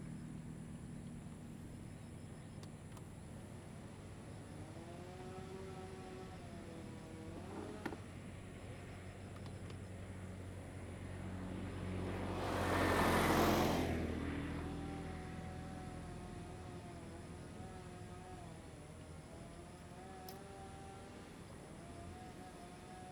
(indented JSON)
{
  "title": "紅頭村, Ponso no Tao - Farm equipment sound",
  "date": "2014-10-30 08:59:00",
  "description": "Traffic Sound, Next to the road, Farm equipment sound\nZoom H2n MS +XY",
  "latitude": "22.04",
  "longitude": "121.53",
  "altitude": "15",
  "timezone": "Asia/Taipei"
}